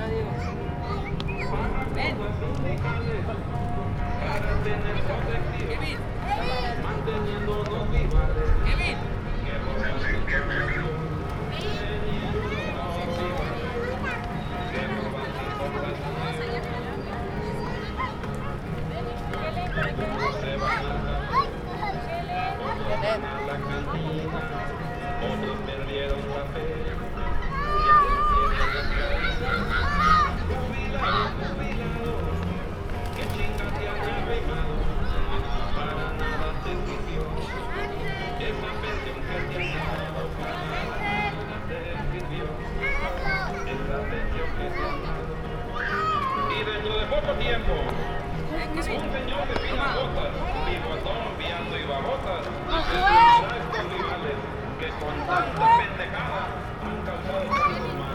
Zona Centro, Guadalajara, Mexico - Plaza de Armas
Walking around the central kiosk. Sound of protest music from an encampment of the farming movement 'El Barzón' beside Palacio de Gobierno, background traffic sounds of the busy '16 de Septiembre' avenue, kids playing, movement and chatter of people.